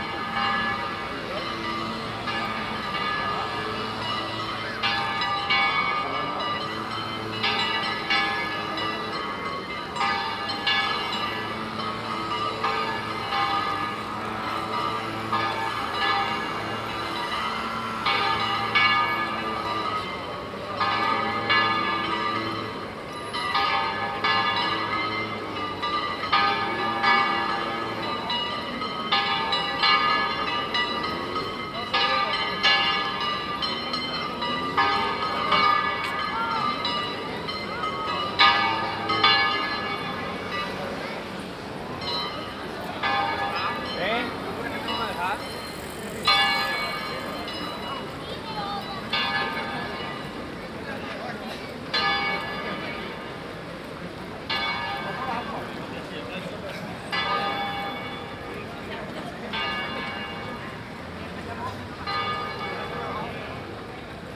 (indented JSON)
{
  "title": "Sevilla, Provinz Sevilla, Spanien - Sevilla - street marathon",
  "date": "2016-10-10 09:30:00",
  "description": "On the Calle Parlamento de Andalucia. The sound of the bells of the Macarena Church and hundrets of feets running at a city marathon.\ninternational city sounds - topographic field recordings and social ambiences",
  "latitude": "37.40",
  "longitude": "-5.99",
  "altitude": "9",
  "timezone": "Europe/Madrid"
}